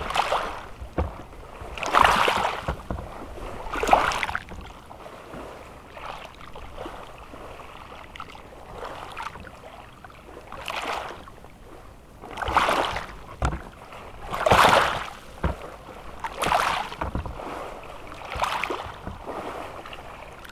promenade au fil de l'eau, Brison-Saint-Innocent, France - Vaguelettes
Au bord de l'eau, près d'un morceau de bois flotté mis en mouvement par la force des vagues.
France métropolitaine, France, 6 September 2022, ~12:00